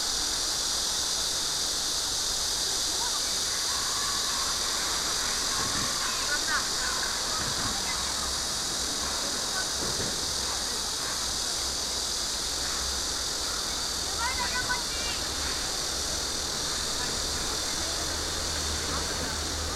Busan Museum of Modern Art 3